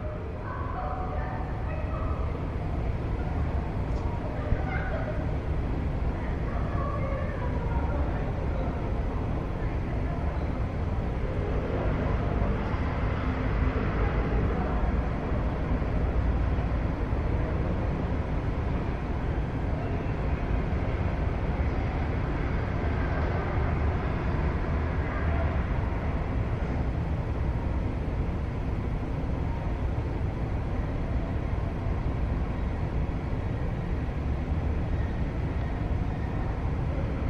112台灣台北市北投區學園路1號國立臺北藝術大學圖書館 - the sound around the pond
recording in the flower bud
18 October 2012, ~16:00